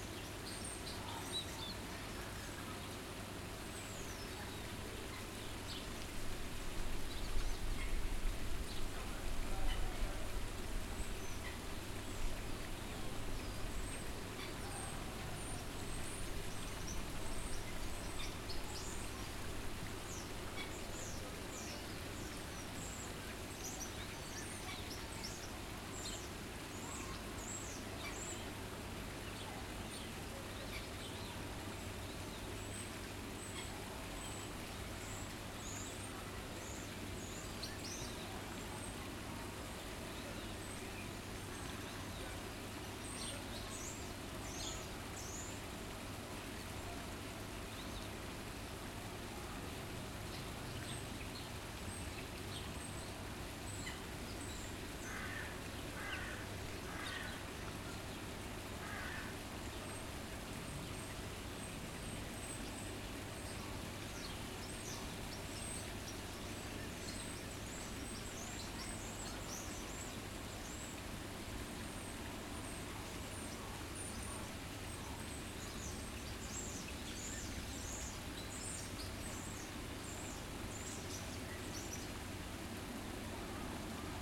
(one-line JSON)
{"title": "Jardin des Plantes, Paris, France - Volière, Zoo du Jardin des Plantes", "date": "2014-08-18 13:30:00", "description": "Ménagerie, le Zoo du Jardin des Plantes", "latitude": "48.84", "longitude": "2.36", "altitude": "35", "timezone": "Europe/Paris"}